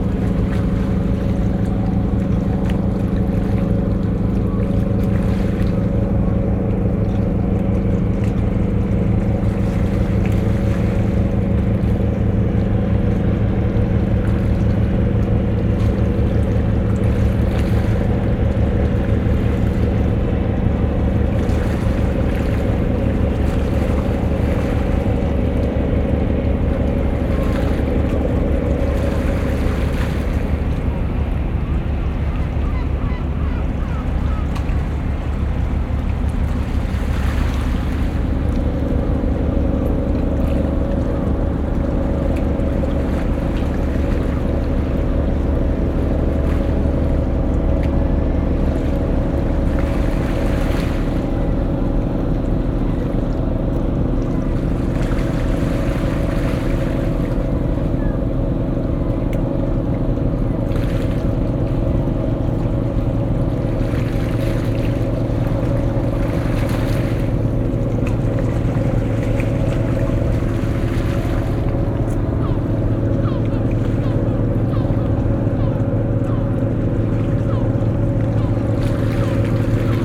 {
  "title": "Heybeliada seaside boat, Istanbul",
  "date": "2010-03-01 16:53:00",
  "description": "Boat traffic off the coast of Heybeliada island near Istanbul",
  "latitude": "40.88",
  "longitude": "29.10",
  "altitude": "11",
  "timezone": "Europe/Tallinn"
}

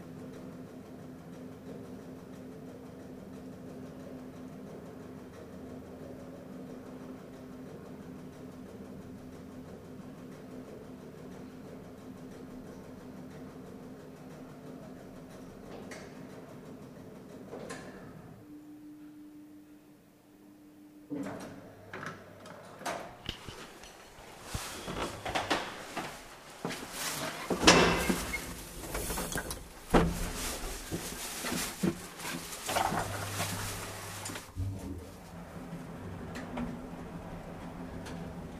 {
  "title": "Elevator, rue des Jeûneurs",
  "date": "2010-12-31 16:05:00",
  "description": "In the center of the staircase, a little cubicle box built with dimensions to fit the standardized human body. Draws you up or takes you down when pressing a button. Alienation of climbing stairs. Very practicle, but not romantic.",
  "latitude": "48.87",
  "longitude": "2.35",
  "altitude": "50",
  "timezone": "Europe/Paris"
}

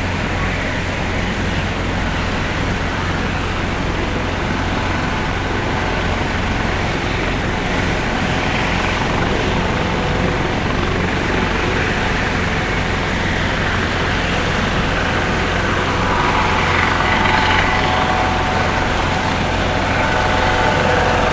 {
  "title": "Avenue du Maréchal Gallieni, Paris, France - HELICOPTER Taking OFF - Place des Invalides - France National Day",
  "date": "2019-07-14 15:12:00",
  "description": "5 helicopter taking off from the \"place des invalides\" in front of the \"musée des armées\" during the french national day.\nRecorder: Zoom H5",
  "latitude": "48.86",
  "longitude": "2.31",
  "altitude": "28",
  "timezone": "Europe/Paris"
}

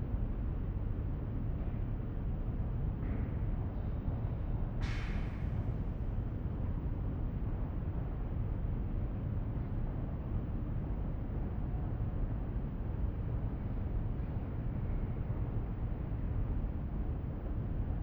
{
  "title": "Unterbilk, Düsseldorf, Deutschland - Düsseldorf, Landtag NRW, plenar hall",
  "date": "2012-11-23 12:20:00",
  "description": "Inside the plenar hall of the Landtag NRW. The sound of the ventilations and outside ambience reflecting in the circular room architecture. Also to be haerd: door movements and steps inside the hall.\nThis recording is part of the exhibition project - sonic states\nsoundmap nrw - sonic states, social ambiences, art places and topographic field recordings",
  "latitude": "51.22",
  "longitude": "6.76",
  "altitude": "45",
  "timezone": "Europe/Berlin"
}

